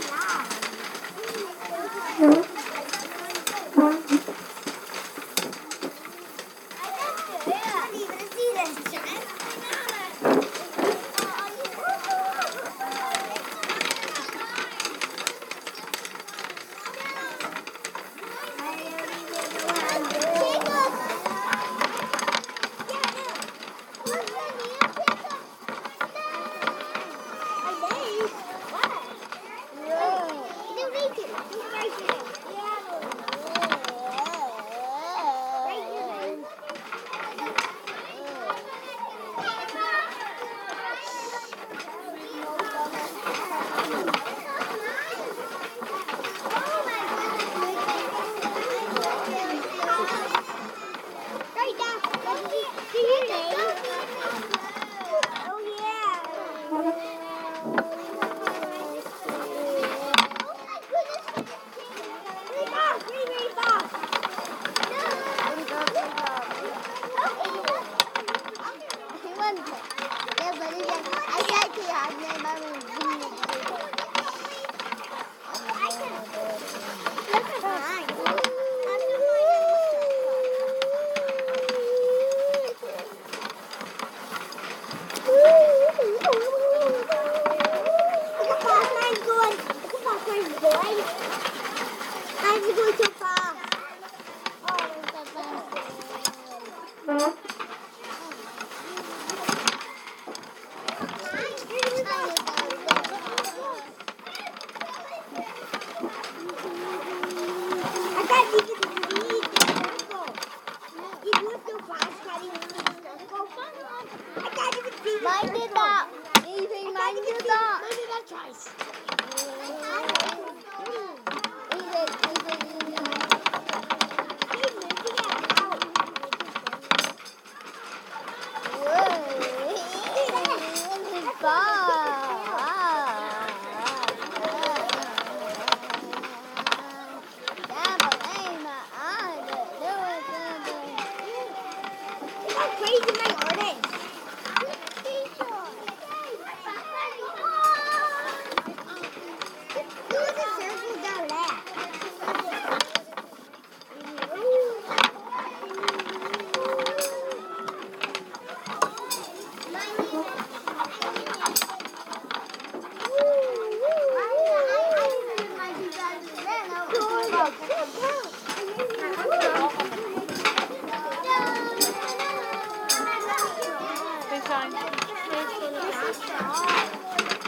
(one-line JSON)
{"title": "Pobalscoil Chorca Dhuibhne, Spa Rd, Dingle, Co. Kerry - Drawing to sound", "date": "2014-10-13 14:00:00", "description": "Collaborative drawing 2nd year students Pobalscoil Chorca Dhuibhne, Dingle, Co. Kerry\nDrawing to sound pencil on paper perspex disc", "latitude": "52.14", "longitude": "-10.27", "altitude": "21", "timezone": "Europe/Dublin"}